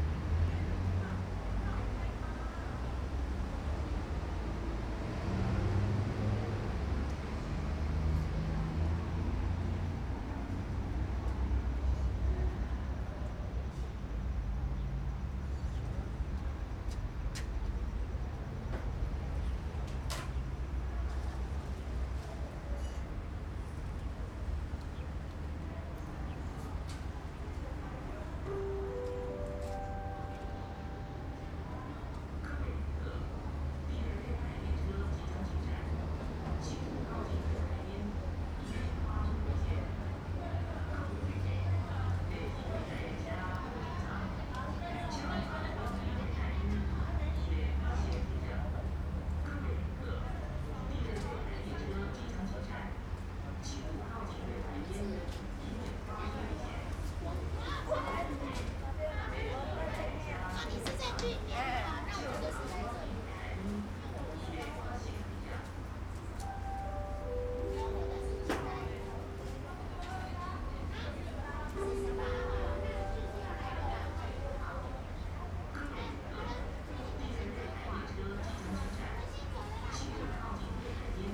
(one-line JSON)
{"title": "Zuoying Station - Waiting", "date": "2012-03-03 15:51:00", "description": "in the Station platforms, Station broadcast messages, Train traveling through, Rode NT4+Zoom H4n", "latitude": "22.68", "longitude": "120.29", "altitude": "6", "timezone": "Asia/Taipei"}